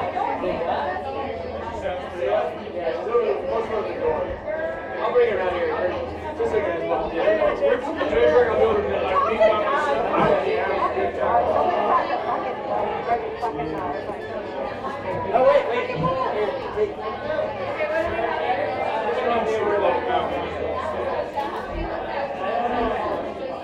Muhlenberg College Hillel, West Chew Street, Allentown, PA, USA - Inside the Liberty Street Tavern
A normal dollar slice night at the liberty street tavern